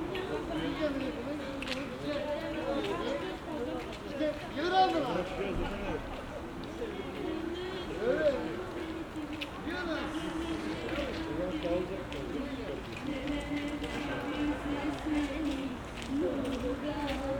Berlin: Vermessungspunkt Maybachufer / Bürknerstraße - Klangvermessung Kreuzkölln ::: 06.10.2012 ::: 03:19